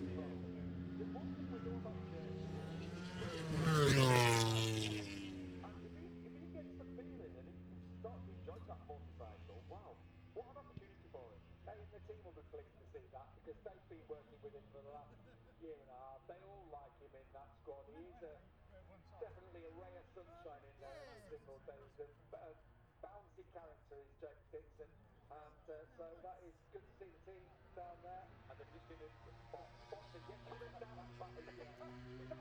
Silverstone Circuit, Towcester, UK - british motorcycle grand prix ... 2021
moto grand prix free practice one ... maggotts ... dpa 4060s to MixPre3 ...